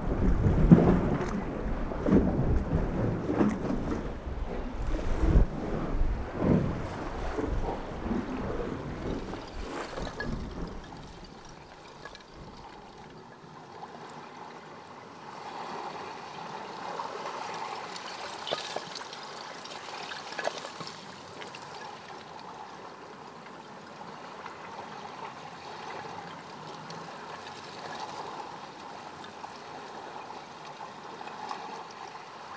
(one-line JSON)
{"title": "Montreal: Lachine Canal: Municipal Pier - Lachine Canal: Municipal Pier", "date": "2001-07-01", "description": "Condensed from excepts over one summer. The piece begins with excerpts from two remarkable days of extreme weather change in the spring. One April 13, over the pier, seeming close to flooding. The next day, small ice pellets are thrust against the shoreline by the wind, and fill the holes between big rocks, waves making baroque melodies as they crash through.", "latitude": "45.43", "longitude": "-73.69", "altitude": "21", "timezone": "America/Montreal"}